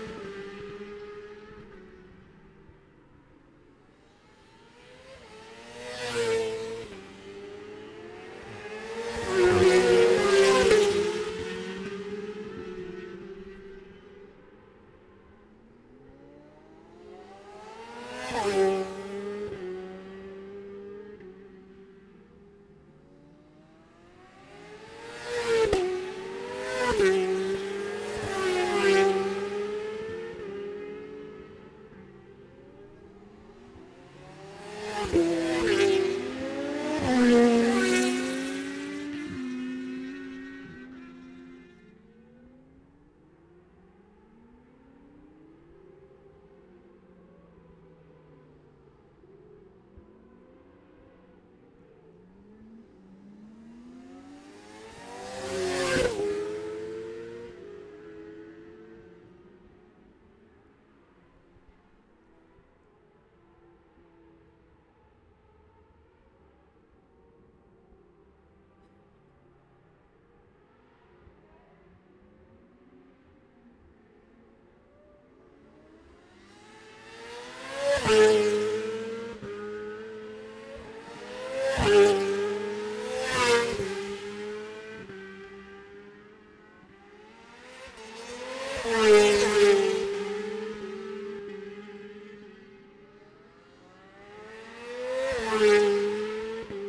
Jacksons Ln, Scarborough, UK - Gold Cup 2020 ...
Gold Cup 2020 ... 600 odd Qualifying ... Memorial Out ... dpas bag MixPre3 ...